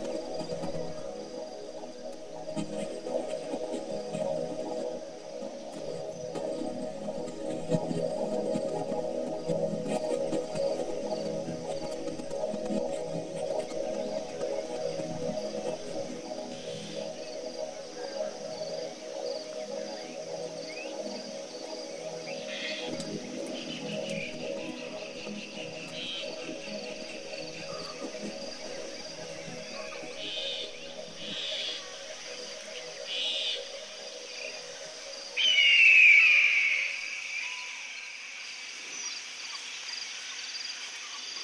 cologne, stadtgarten, parkradio installation
temporäre parkradio installation im rahmen von plan06 - artist: fs
project: klang raum garten/ sound in public spaces - in & outdoor nearfield recordings
6 May 2008, 21:56